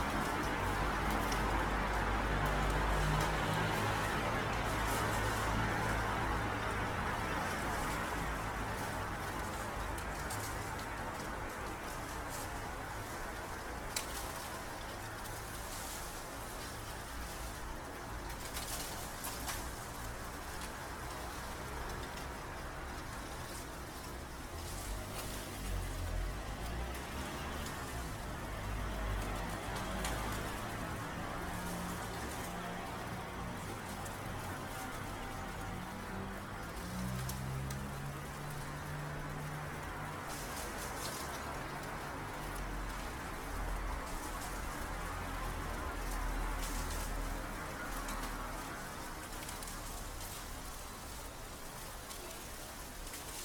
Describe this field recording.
Epreskert (Mulberry Garden) inherited its name from the mulberry trees that covered the area and belongs to the Academy of Fine Arts. Epreskert consists of five buildings each containing studios and was founded as a master painter school in 1882 and has been an integral part of the Academy since 1921. Cold December morning falling leafs from mulberry trees used by flock of pigeons to exercise some strange game just above my head.